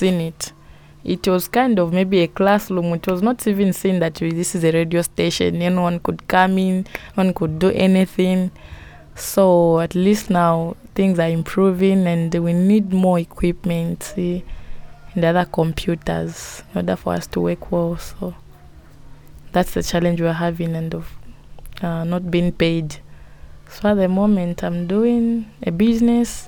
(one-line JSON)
{"title": "Sinazongwe Primary School, Sinazongwe, Zambia - I used to work as a data collector...", "date": "2016-08-06 12:00:00", "description": "At the time I was staying with Zongwe FM, in August 2016, I found two young ladies working there in a group of five youths presenters. After making a recording with Patience Kabuku, here, we are sitting with Monica Sianbunkululu in the yard of Sinazongwe Primary listening to her story of how, as a lady, she found her way as a radio-maker with Zongwe FM. The children of the caretaker are playing in the yard; occasionally they try to attract our attention; we pause and listen to the girls singing across the yard... The radio helped her, she says, even to find a payed job as a data collector at the road construction company...\nThe recording forms part of THE WOMEN SING AT BOTH SIDES OF THE ZAMBEZI, an audio archive of life-story-telling by African women.", "latitude": "-17.25", "longitude": "27.45", "altitude": "496", "timezone": "Africa/Lusaka"}